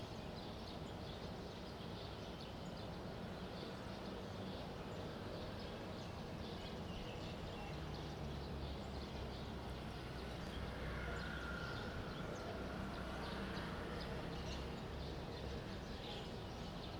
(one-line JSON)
{"title": "Fanshucuo, Shuilin Township - small village morning", "date": "2022-05-15 08:02:00", "description": "small village morning, birds chirping, Traffic sound, chicken crowing\nZoom H2n MS+XY", "latitude": "23.54", "longitude": "120.22", "altitude": "6", "timezone": "Asia/Taipei"}